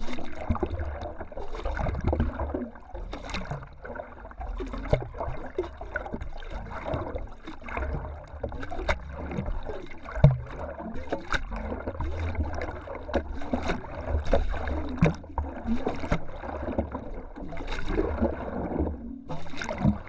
São Miguel-Azores-Portugal, 7 Cidades lake, water on metal tube piezo
Sete Cidades, Portugal, 2 November 2010